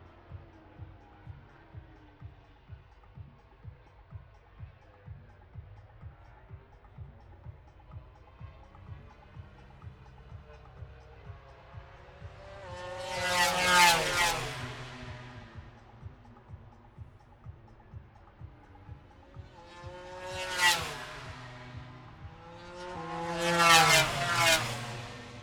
Towcester, UK - british motorcycle grand prix 2022 ... moto grand prix ...
british motorcycle grand prix 2022 ... moto grand prix free practice three ... dpa 4060s on t bar on tripod to zoom f6 ... plus the disco ...